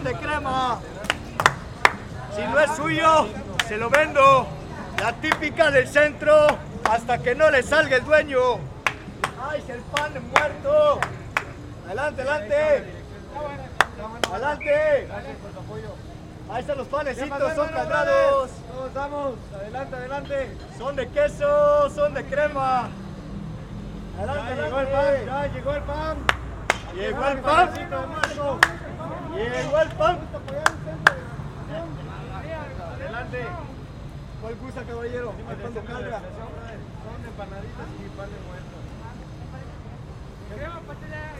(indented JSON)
{
  "title": "x 56 y, C., Centro, Mérida, Yuc., Mexique - Merida - Pan de Muerto",
  "date": "2021-10-30 11:30:00",
  "description": "Merida - Mexique\nPour la \"fête des morts\" (Toussaint - 31 octobre)\nvente du \"pain de mort\" (brioche)",
  "latitude": "20.96",
  "longitude": "-89.62",
  "altitude": "13",
  "timezone": "America/Merida"
}